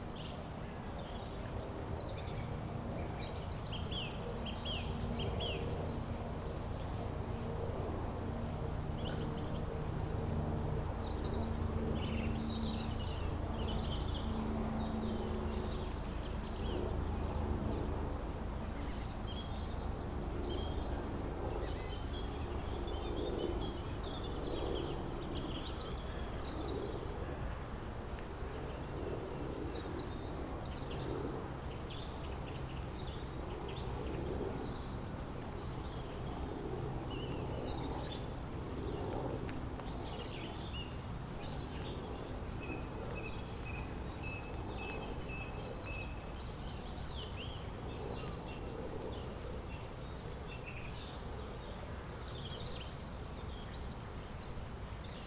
The Broad Walk, Regent's Park, London, UK - Early runners and birds on Regent's Park, London.
On the Broad Walk in Regent's Park, London. Birds, runners, planes above, a fountain in the distance.
20 January 2022, 07:04